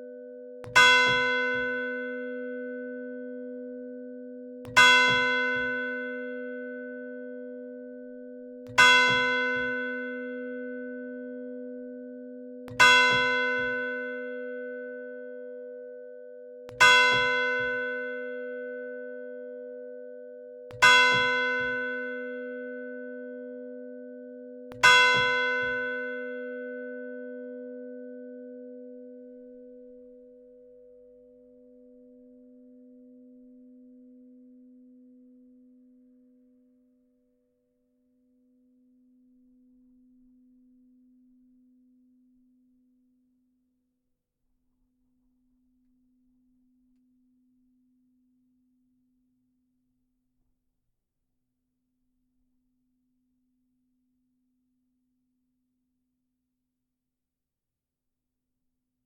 Le Bourg, Tourouvre au Perche, France - Bivilliers - Église St-Pierre
Bivilliers (Orne)
Église St-Pierre
Le Glas